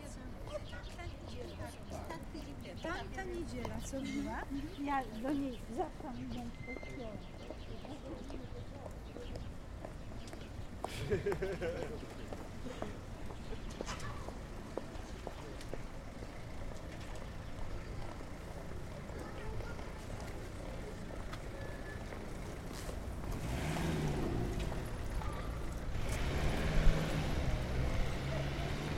Rynek Kosciuszki, Bialystok, Poland - main square ambience 2